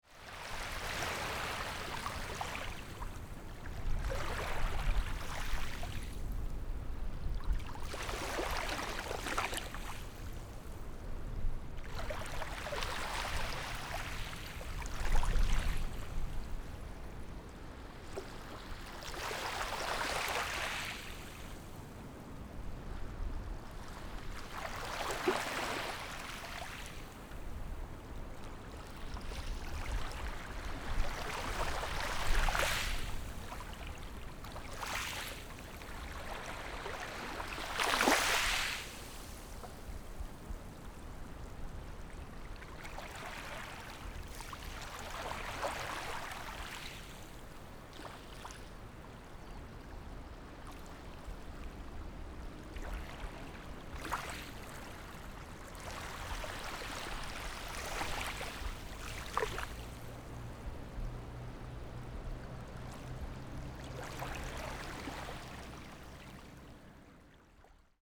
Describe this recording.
Waves and tides, Small beach, Zoom H6 + Rode NT4